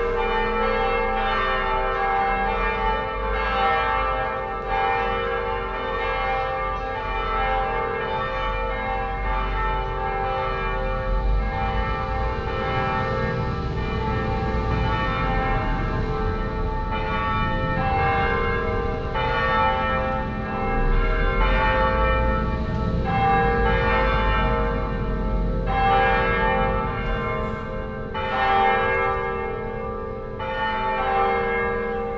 Brussels, Altitude 100.
Brussels, Altitude 100
Sunday Morning, day without cars in Brussels, jus a tram, an ambulance abd thé belles front the Saint-Augustin Church. Dimanche matin, le 20 septembre, à lAltitude 100. Cest la journée sans voitures mais il y aura quand même une ambulance, un bus et un tram. Et la volée de cloches de léglise Saint-Augustin, bien entendu.